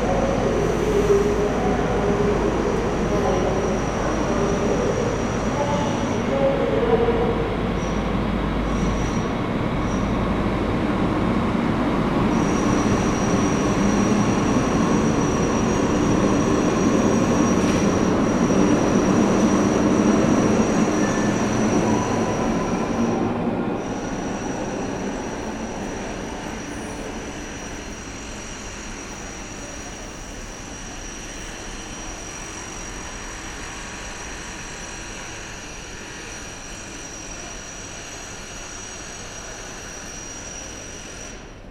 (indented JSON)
{
  "title": "Am Hauptbahnhof Ebene A // gegenüber Gleis, Frankfurt am Main, Deutschland - S-Bahn in Corona Times",
  "date": "2020-04-15 15:10:00",
  "description": "While a week ago there were constant anouncements (that I did not record unfortunately) to keep the distance because of the corona virus, at this wednesday this was totally absent. Recorded with Tascam DR-44-WL.",
  "latitude": "50.11",
  "longitude": "8.66",
  "altitude": "112",
  "timezone": "Europe/Berlin"
}